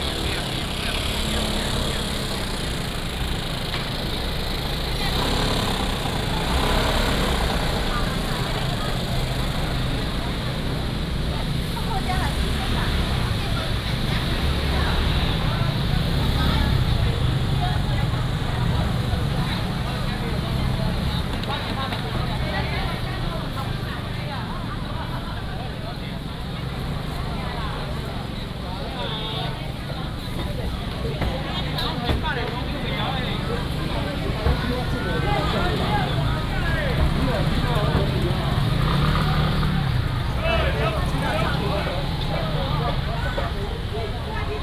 和美鎮公有市場, Changhua County - Walking in the indoor market
Walking in the indoor market